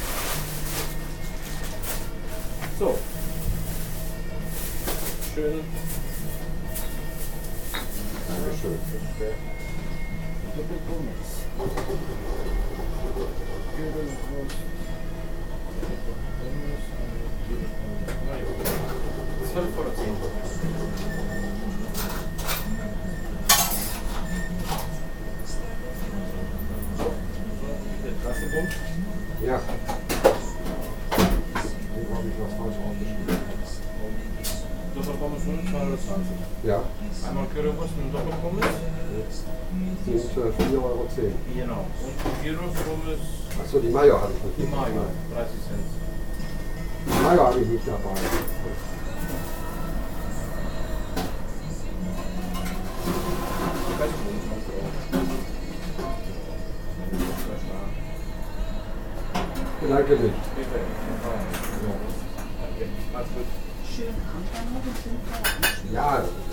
unna, morgenstraße, greek fast food
inside the popular local greek fast food station
soundmap nrw - social ambiences and topographic field recordings
Deutschland, European Union